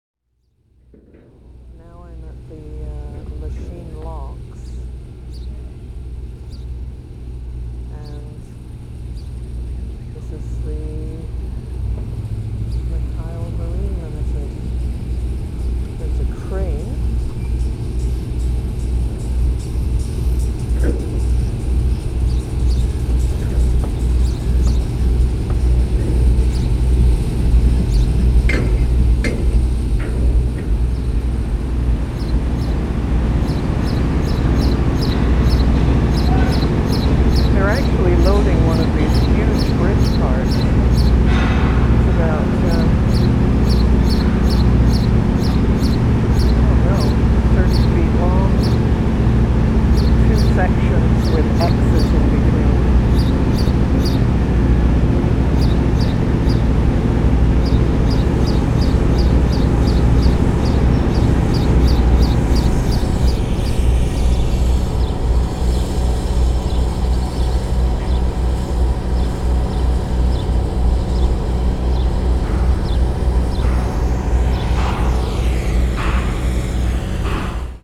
Montreal: Lachine Canal: Lock 5 - Lachine Canal: Lock 5
Condensed from excepts over one summer. The piece begins with excerpts from two remarkable days of extreme weather change in the spring. One April 13, over the pier, seeming close to flooding. The next day, small ice pellets are thrust against the shoreline by the wind, and fill the holes between big rocks, waves making baroque melodies as they crash through.
QC, Canada, June 2000